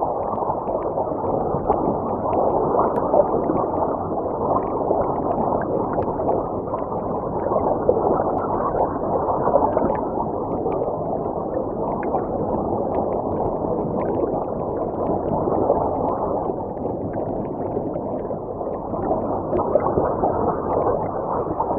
Targ Sienny, Gdańsk, Poland - Kanał Raduni 2
Nagranie zrealizowane hydrofonem w Kanale Raduni. Spacery Dźwiękowe w Ramach Pikniku Instytutu Kultury Miejskiej.
11 August 2018, ~13:00